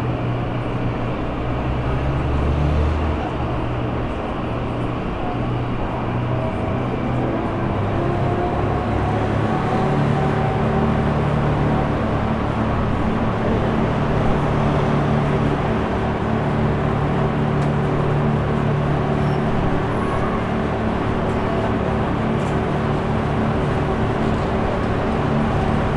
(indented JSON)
{
  "title": "Téléphérique de la Bastille, Grenoble, France - Moteur téléphérique",
  "date": "2022-09-11 16:02:00",
  "description": "Gare du téléphérique de la Bastille le moteur au cours de la montée des cabines, les bruits de la circulation, les voix dans la file d'attente.",
  "latitude": "45.19",
  "longitude": "5.73",
  "altitude": "217",
  "timezone": "Europe/Paris"
}